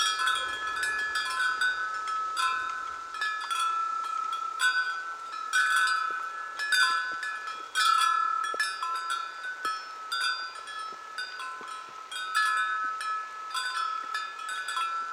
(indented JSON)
{
  "title": "Aglona, Latvia, chimes on Christs Mount",
  "date": "2020-07-30 18:10:00",
  "description": "The chimes on The King's Hill of Christ, near Aglona, Latvia",
  "latitude": "56.12",
  "longitude": "27.04",
  "altitude": "148",
  "timezone": "Europe/Riga"
}